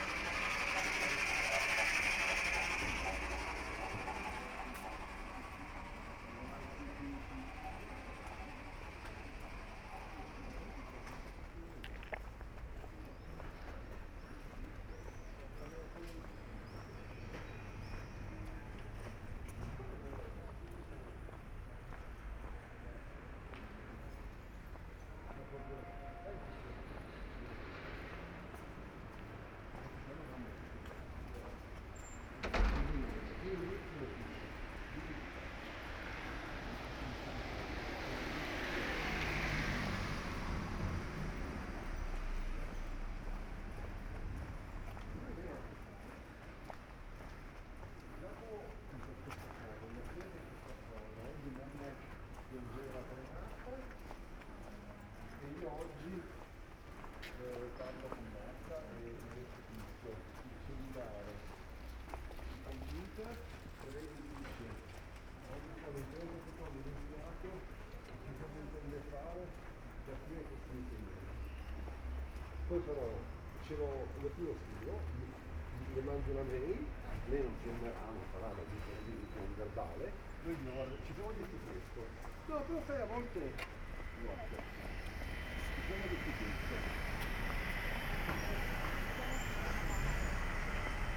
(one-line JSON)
{"title": "Ascolto il tuo cuore, città. I listen to your heart, city. Several chapters **SCROLL DOWN FOR ALL RECORDINGS** - It’s seven o’clock with bells on Wednesday in the time of COVID19 Soundwalk", "date": "2020-04-15 18:53:00", "description": "\"It’s seven o’clock with bells on Wednesday in the time of COVID19\" Soundwalk\nChapter XLVI of Ascolto il tuo cuore, città. I listen to your heart, city\nWednesday April 15th 2020. San Salvario district Turin, walking to Corso Vittorio Emanuele II and back, thirty six days after emergency disposition due to the epidemic of COVID19.\nStart at 6:53 p.m. end at 7:21 p.m. duration of recording 28’09”\nThe entire path is associated with a synchronized GPS track recorded in the (kmz, kml, gpx) files downloadable here:", "latitude": "45.06", "longitude": "7.69", "altitude": "239", "timezone": "Europe/Rome"}